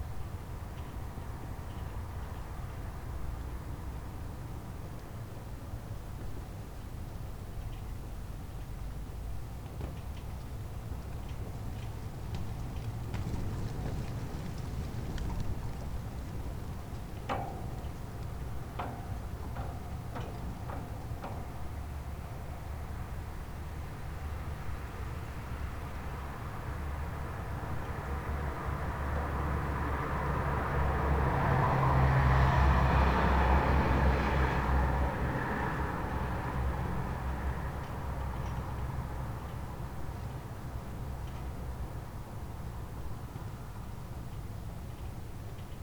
wermelskirchen, berliner straße: terrasse - the city, the country & me: flagstaffs in the wind
wind-whipped ropes of flagstaffs, night traffic
the city, the country & me: june 18, 2011